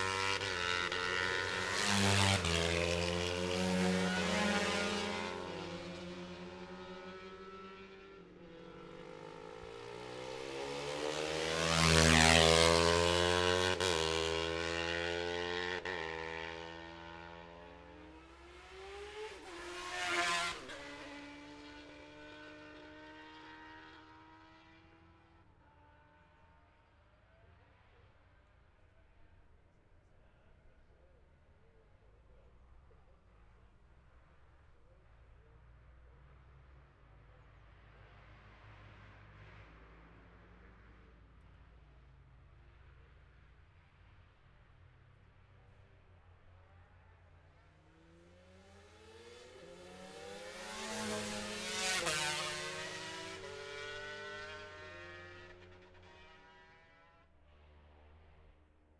bob smith spring cup ... ultra-light weights practice... dpa 4060s to MIxPre3 ...